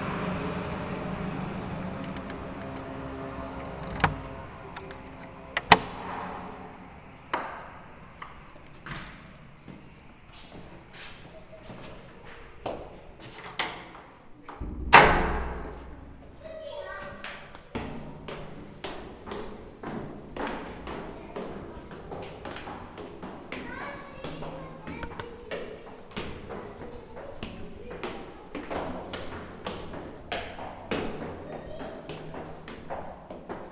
Rue Al Imam Assahli, Essaouira, Marokko - Appartement hallway
Essaouira الصويرة, Pachalik dEssaouira باشوية الصويرة, Province Essaouira ⵍⵉⵇⵍⵉⵎ ⵏ ⵚⵡⵉⵔⴰ إقليم الصويرة